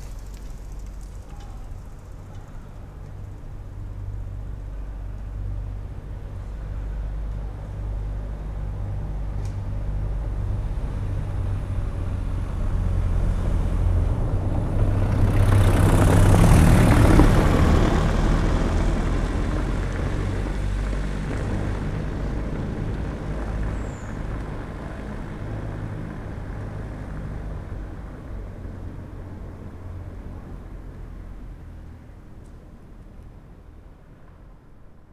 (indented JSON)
{
  "title": "Berlin: Vermessungspunkt Friedel- / Pflügerstraße - Klangvermessung Kreuzkölln ::: 01.04.2011 ::: 01:05",
  "date": "2011-04-01 01:05:00",
  "latitude": "52.49",
  "longitude": "13.43",
  "altitude": "40",
  "timezone": "Europe/Berlin"
}